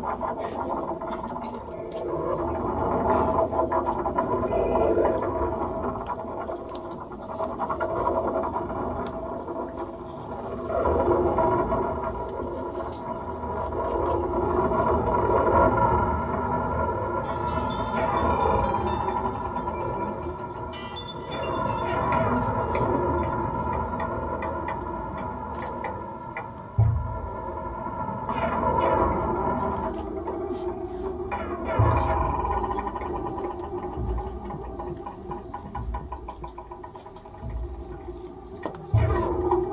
The Norwegian Band KOBERT give a surprisingly intimate concert. All ears on their beautiful sounds as they play songs from their new album Invasion of Privacy. As people come in and close the door behind them, they found the concert space all covered with clothes - what was feared to sound a little damped, in the end went right into the listeners hearts. This season of musical harvest was a plain succes, we have found some fruitful trasures. The winter is safe!
Deutschland, European Union, 11 September 2010, 22:06